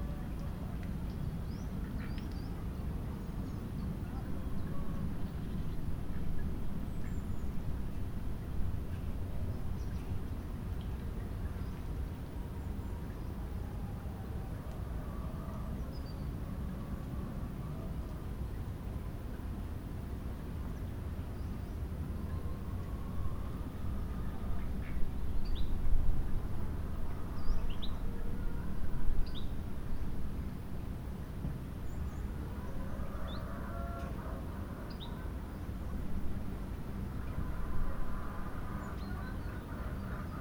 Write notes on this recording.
Morning sounds recorded from the windowsill on the 2nd floor facing the garden and wood behind the building.